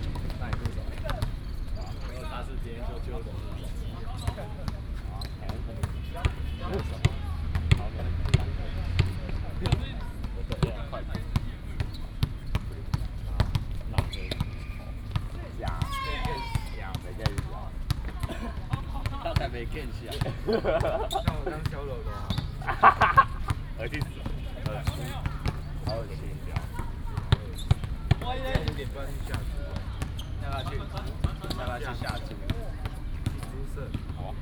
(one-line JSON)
{"title": "礁溪鄉礁溪國小, Yilan County - Play basketball", "date": "2014-07-07 17:00:00", "description": "Play basketball, Traffic Sound, Very hot weather", "latitude": "24.82", "longitude": "121.77", "altitude": "18", "timezone": "Asia/Taipei"}